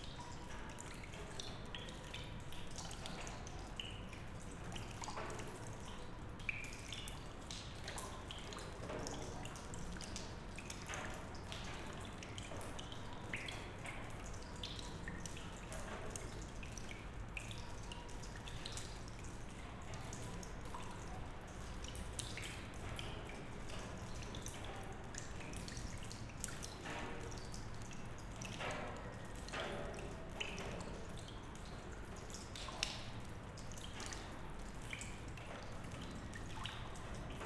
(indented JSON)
{
  "title": "Veterinario, Punto Franco Nord, Trieste, Italy - drops on barrels",
  "date": "2013-09-11 15:40:00",
  "description": "former stables building and veterinary, Punto Franco Nord, Trieste. drops falling from the ceiling into a feeder and on empty barrels.\n(SD702, AT BP4025)",
  "latitude": "45.67",
  "longitude": "13.76",
  "altitude": "3",
  "timezone": "Europe/Rome"
}